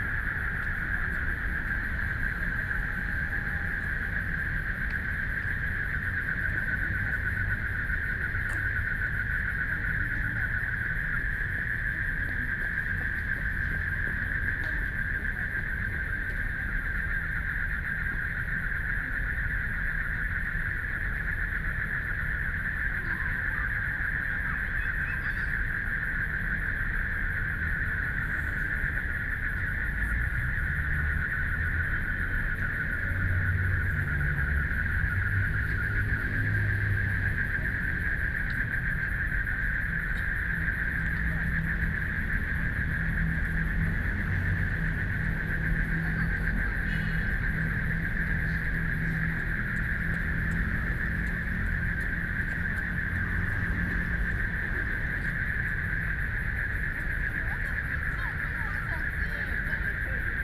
碧湖公園, Taipei City - In the park
In the park, People walking and running, Traffic Sound, Frogs sound
Binaural recordings